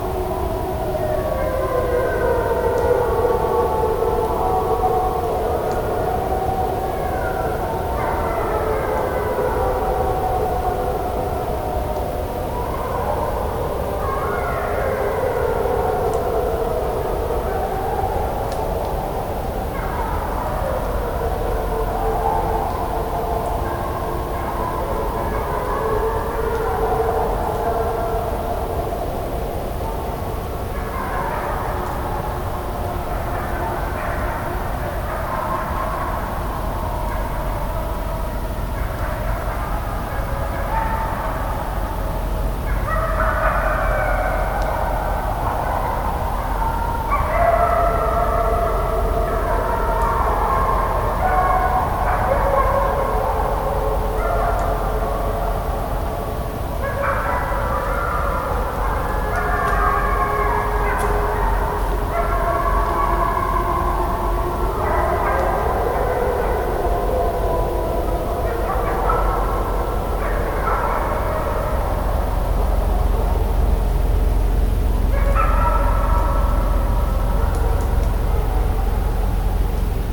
Heide, Dresden, Deutschland - Wolf, Wölfe Heulen!?
ein wirklich beeindruckendes Wolfs-singen, mit jungen Wölfen, wie erklärt wurde.
das tonschnipsel ist original, ohne bearbeitungen. man hört schön den regen. ist vom jörg "düse" düsterhöft er ist begeisterter hobbyornithologe und hat das quasi "mitgenommen" als beifang. dresdner heide, zw. langebrück/radeberg, gehört ri. radeberg/arnsdorf. ende märz um sechse :-)